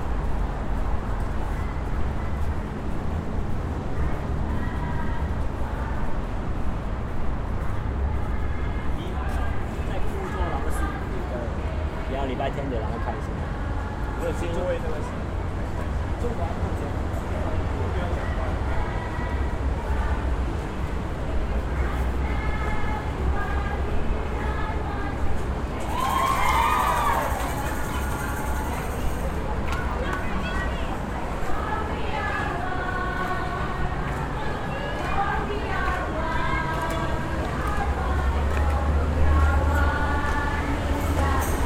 Hong-Kong:::Sunday Song by Philippines servants
Wan Chai, Hong Kong